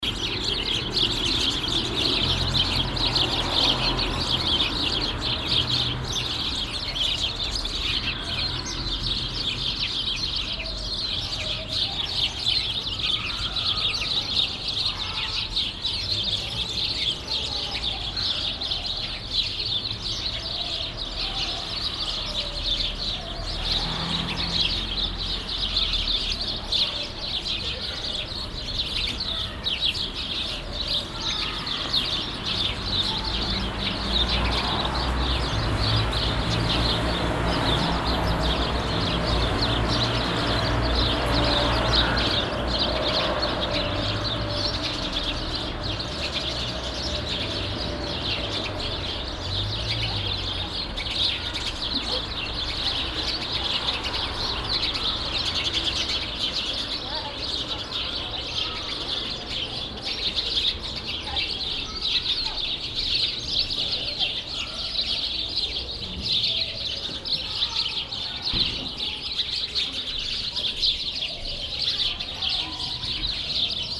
Berlin, Kastanienallee, bird wall - berlin, kastanienallee 86, bird wall
On the street at the entrance of an occupied house. The sound of birds that are nested at the green fassade of the building.
In the background the street traffic noise.
soundmap d - social ambiences and topographic field recordings